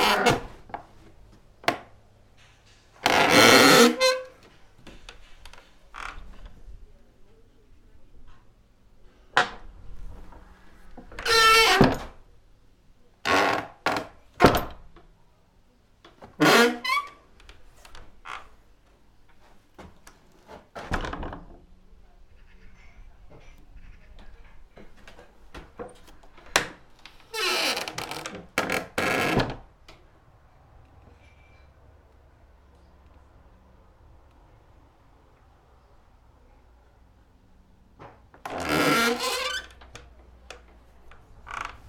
Opechensky Posad, Novgorodskaya region, Russia - Opechensky Posad July 28 2013 summer day door
Loud and awesome door squeak!